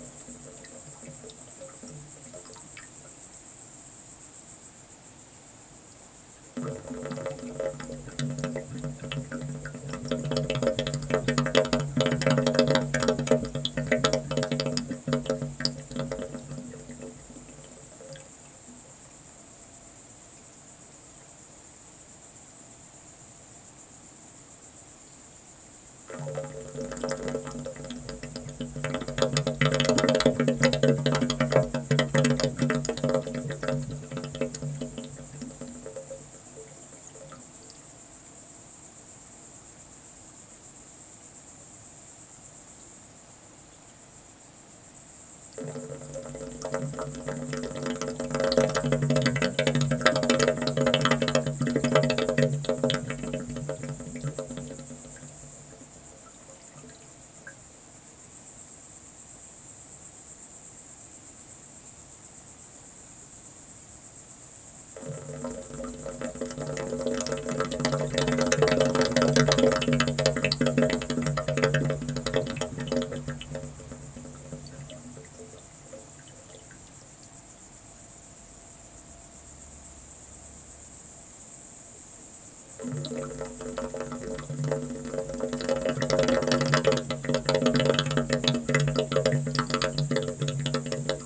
fountain, water, Auvergne, Puy-de-dôme, night, insects
Saint-Pierre-la-Bourlhonne, France, 17 August